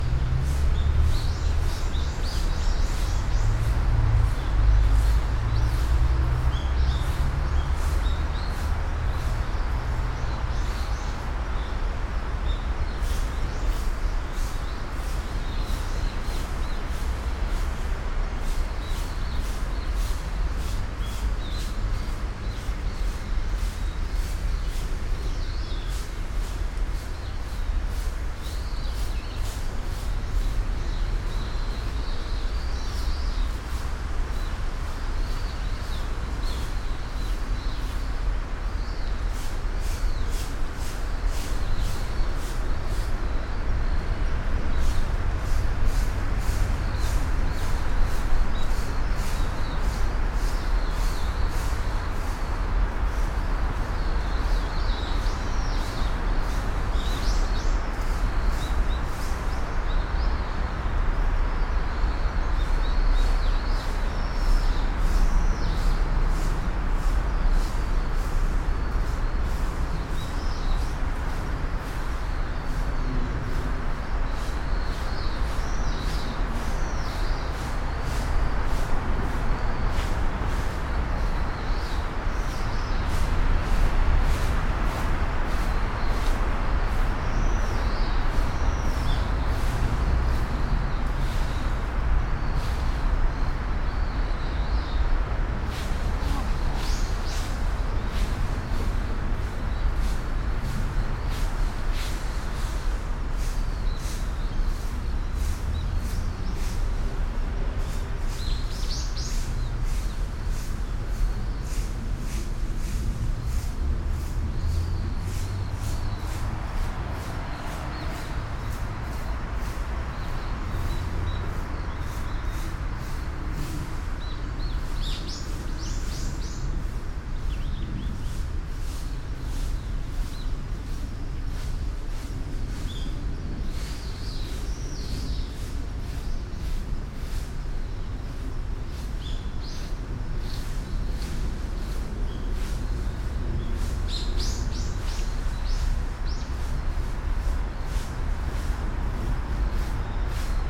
gardens sonority, birds, traffic noise